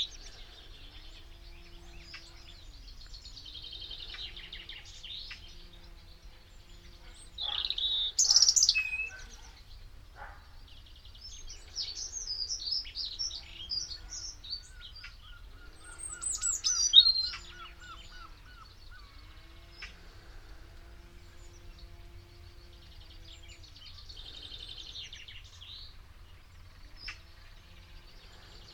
spring birds, Barr Lane, Chickerell 1